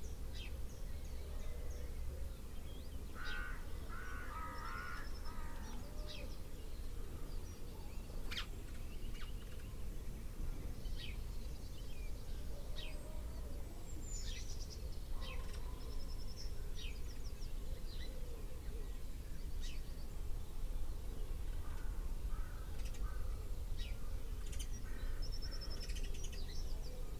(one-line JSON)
{"title": "Punnetts Town, Heathfield, UK - Cuckoo Calling 1 May 2019", "date": "2019-05-01 06:00:00", "description": "Cuckoo arrived on 19 April - has been calling most mornings. Woke up at 6am to hear this beautiful sound. Tascam DR-05 internal mics with wind muff. Amplified slightly in Audacity", "latitude": "50.96", "longitude": "0.31", "altitude": "119", "timezone": "Europe/London"}